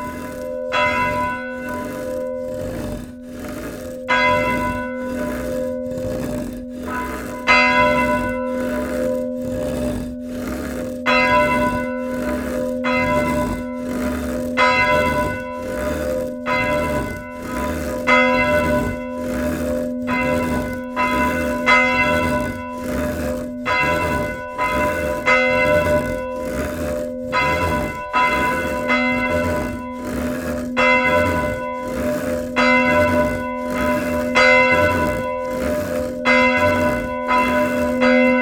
Rue de l'Église, Manou, France - Manou - Église St-Pierre de Manou
Manou (Eure-et-Loir)
Église St-Pierre de Manou
La volée manuelle
Centre-Val de Loire, France métropolitaine, France, 14 November